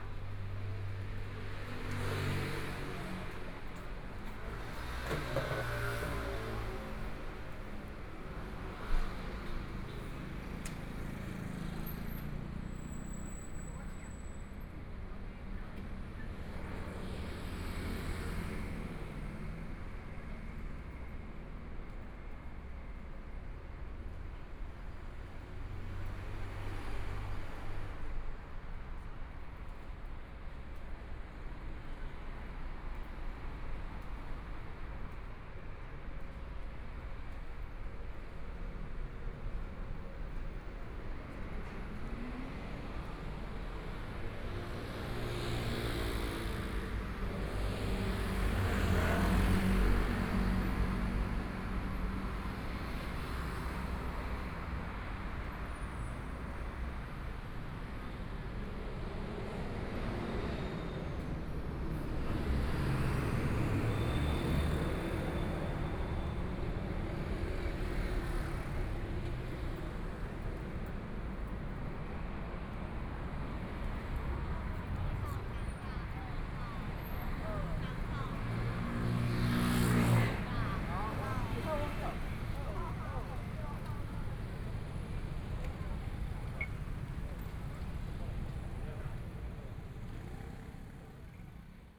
Jilin Rd., Taipei City - Walking on the road
Walking on the road, from Nong'an St. to Minquan E. Rd., A variety of restaurants and shops, Pedestrian, Traffic Sound, Motorcycle sound
Binaural recordings, ( Proposal to turn up the volume )
Zoom H4n+ Soundman OKM II